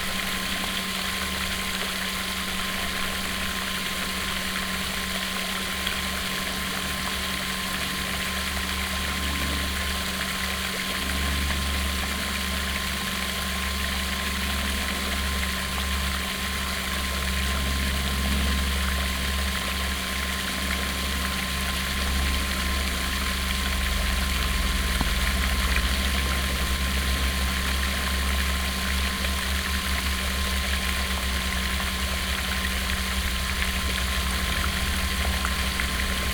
Zlotniki, Sloneczna - at the pond
at the pond in a small village of Zlotniki. An artificial pond with a fountain in the center. sound of the splashing water and operating pump. plane flies by. (roland r-07)